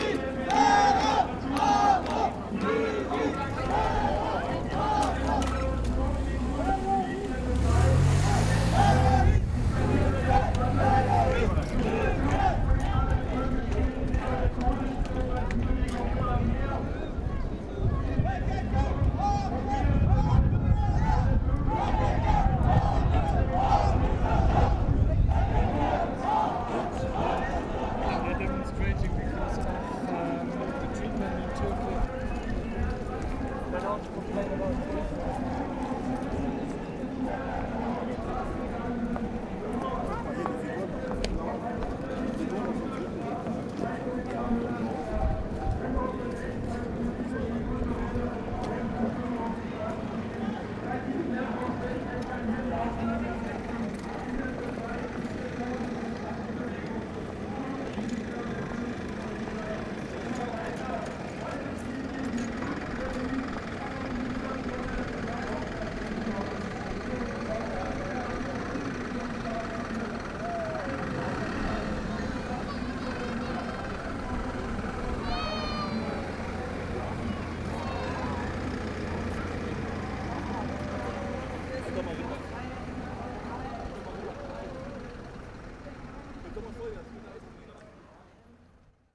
berlin: unter den linden/unterwasserstraße - the city, the country & me: kurdish demonstration
kurdish demonstration against arms transfer to turkey followed by police cars
the city, the country & me: april 10, 2011
Berlin, Germany